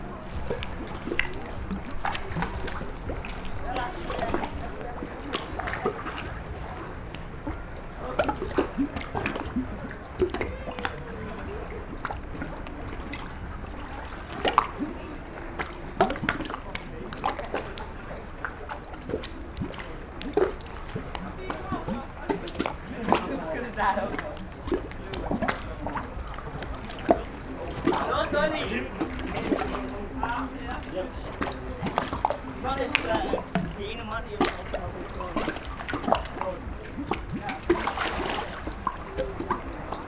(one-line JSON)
{"title": "Novigrad, Croatia - three round and two square holes", "date": "2013-07-20 22:43:00", "description": "sounds of sea and night walkers", "latitude": "45.31", "longitude": "13.56", "timezone": "Europe/Zagreb"}